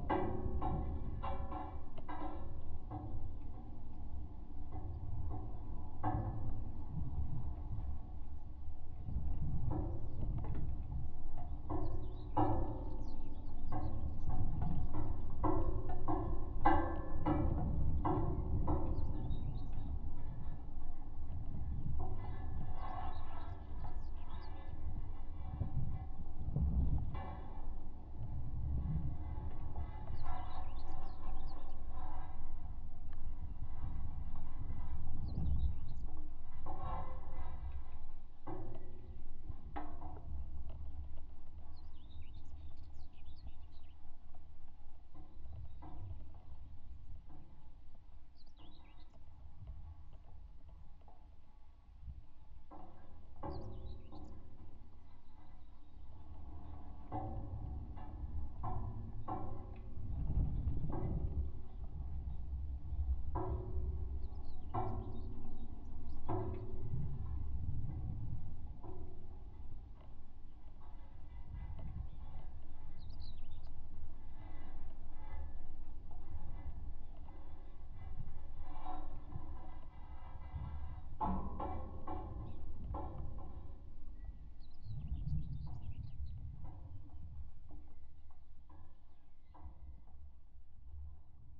{"title": "Nida, Lithuania, pole at airport", "date": "2018-05-25 11:50:00", "description": "abandoned Nida airport. a pole of broken wind direction meter. the recording is a mix from contact and omni microphones", "latitude": "55.33", "longitude": "21.05", "altitude": "1", "timezone": "Europe/Vilnius"}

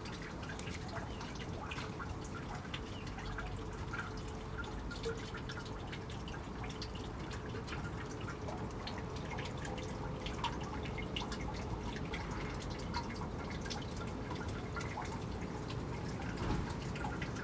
{"title": "Contención Island Day 32 outer east - Walking to the sounds of Contención Island Day 32 Friday February 5th", "date": "2021-02-05 11:01:00", "description": "The Drive Moor Crescent Moorfield Jesmond Dene Road Friday Fields Lane Towers Avenue Bemersyde Drive Deepwood\nDrizzle\nblown on the east wind\nRain gathers\nruns and drops\ninto a grating\na dog barks", "latitude": "55.00", "longitude": "-1.60", "altitude": "63", "timezone": "Europe/London"}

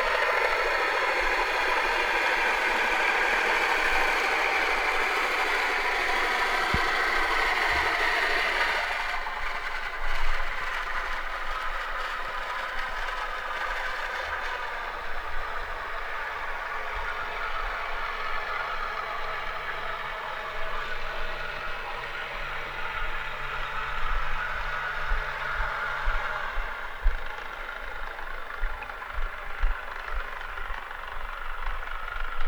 {"title": "Gdańsk, Poland - Hydrofon", "date": "2016-08-21 11:11:00", "description": "Hydrofon - nagranie z nabrzeża.", "latitude": "54.35", "longitude": "18.82", "altitude": "21", "timezone": "Europe/Warsaw"}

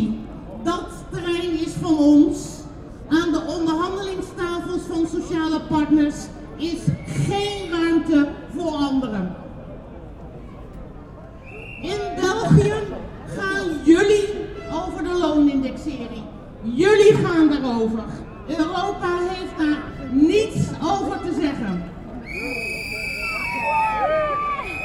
{"date": "2011-03-24 11:30:00", "description": "Brussels, Rue de la Loi, European demonstration near the European Parliament.\nManifestation Rue de la Loi, près du Parlement.", "latitude": "50.84", "longitude": "4.38", "altitude": "64", "timezone": "Europe/Brussels"}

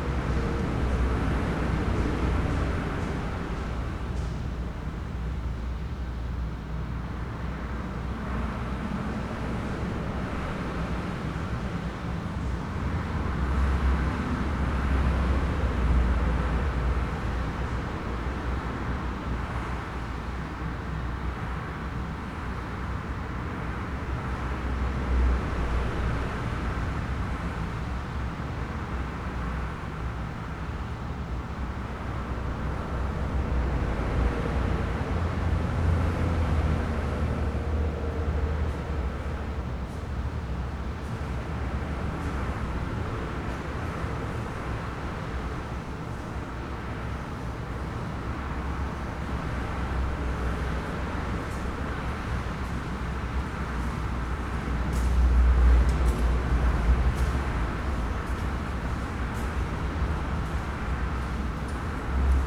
{"title": "Trowell, UK - 0ver the M1 ...", "date": "2017-05-15 14:00:00", "description": "Over the M1 ... on the walkway over the M1 that joins the services at Trowell ... Olympus LS 11 integral mics ... footsteps ... conversations ... traffic ...", "latitude": "52.96", "longitude": "-1.27", "altitude": "79", "timezone": "Europe/London"}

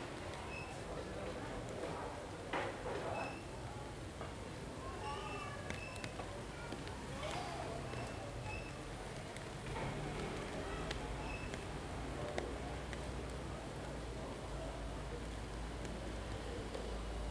{"title": "Carrer Verdi, Barcelona, Spain - Slight rain and street", "date": "2015-06-11 19:00:00", "description": "Recording made from a balcony. There's some slight rain at the beginning and then sounds from the street.", "latitude": "41.40", "longitude": "2.16", "altitude": "71", "timezone": "Europe/Madrid"}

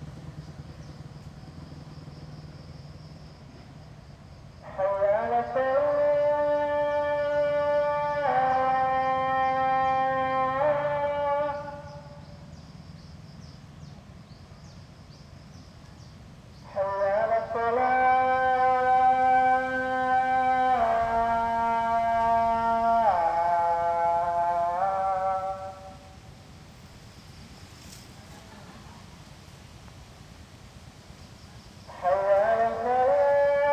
{"title": "Koh Bulon Lae, Thailand - drone log 06/03/2013", "date": "2013-03-06 17:55:00", "description": "Koh Bulon, Chao Leh village, muezzin\n(zoom h2, build in mic)", "latitude": "6.83", "longitude": "99.54", "altitude": "15", "timezone": "Asia/Bangkok"}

